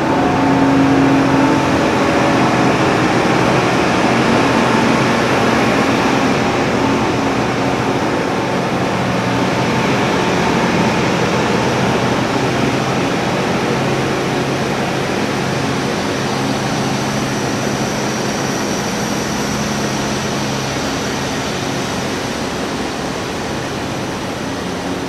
kasinsky Il suono inudibile del desiderio Aprile 2007 Paesaggio Carbon
Ascoli Piceno Province of Ascoli Piceno, Italy